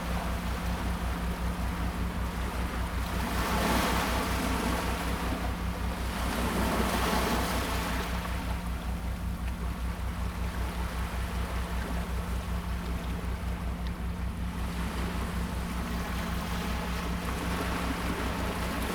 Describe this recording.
Sound of the waves, On the coast, Zoom H2n MS+XY +Sptial Audio